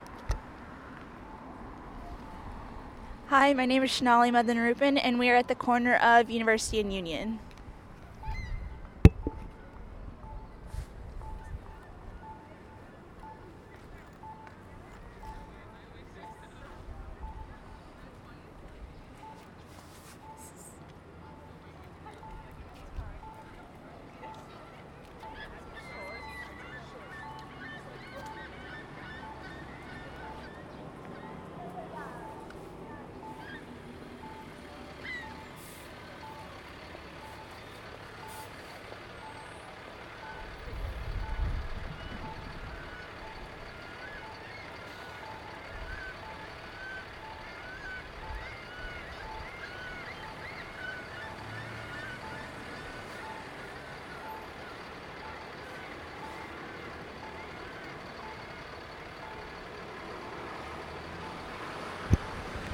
Please refer to the audio file for names of the location and the recordist. This soundscape recording is part of a project by members of Geography 101 at Queen’s University.
ON, Canada, 20 March 2018, ~18:00